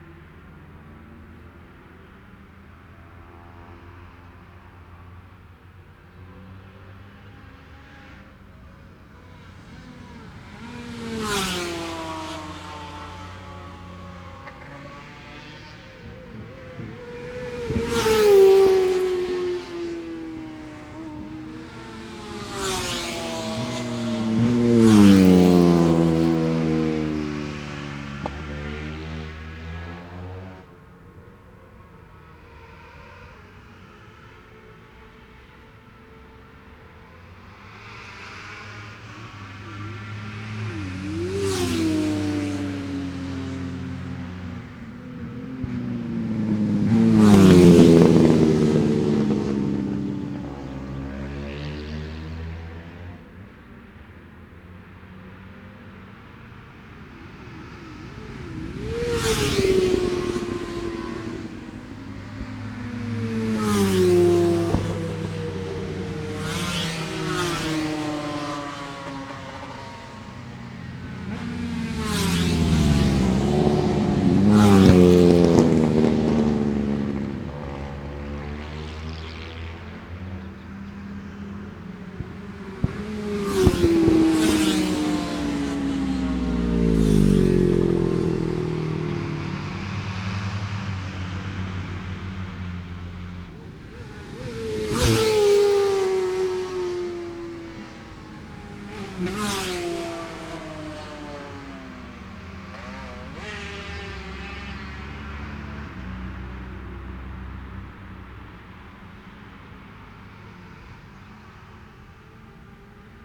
Scarborough UK - Scarborough Road Races 2017 ...
Cock o' the North Road Races ... Oliver's Mount ... ultra lightweight / lightweight motorbike qualifying ...